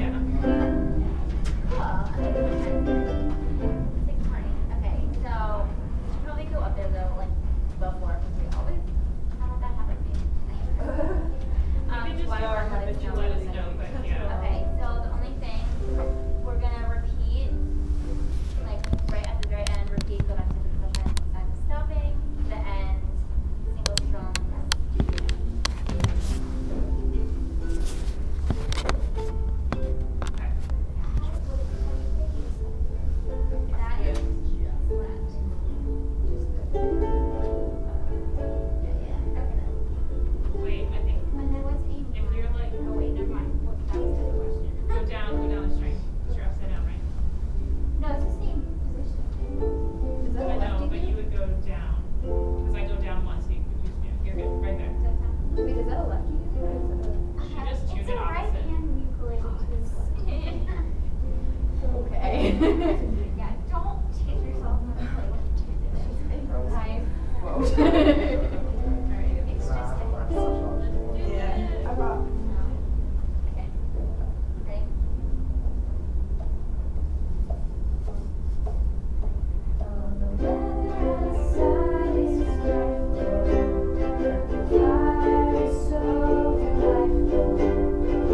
Muhlenberg College, West Chew Street, Allentown, PA, USA - Outside the Red Door
Students practice a holiday melody on ukuleles outside the Red Door in the Muhlenberg student Union building.